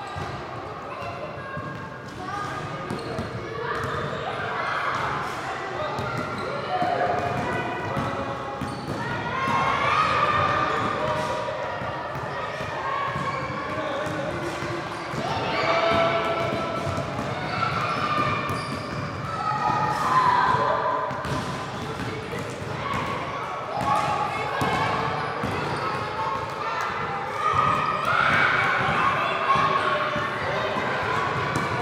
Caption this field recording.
Tech Note : Sony PCM-M10 internal microphones.